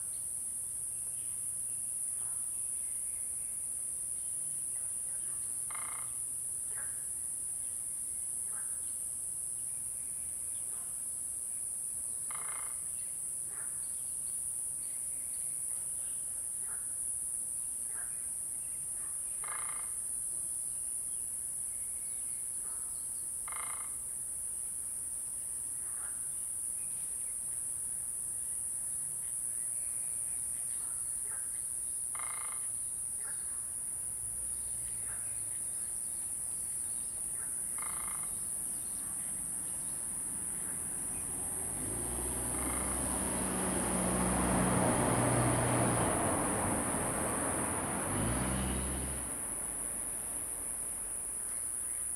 草楠, 桃米里Puli Township - Bird andTraffic Sound
Bird andTraffic Sound
Zoom H2n MS+XY
Nantou County, Taiwan, 5 May 2016